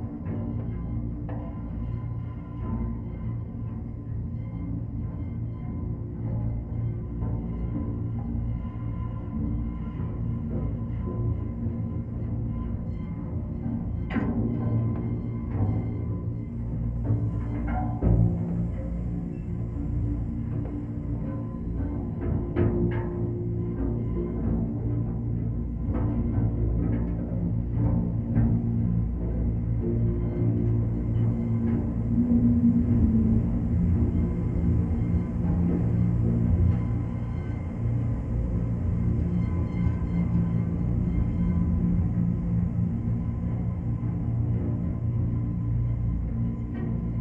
Parallel sonic worlds: Millennium Bridge deep drone, Thames Embankment, London, UK - Millennium Bridge wires singing in the wind
The sound of winds in the wires of the bridge picked up by a contact mic. The percussive sounds are the resonance of footsteps and rolling cases.